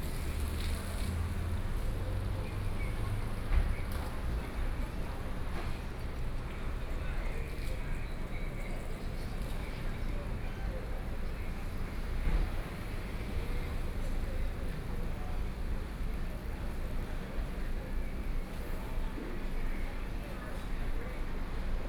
small Town, Next to the Market
Chihshang Township, Taitung County, Taiwan, 7 September 2014, 13:12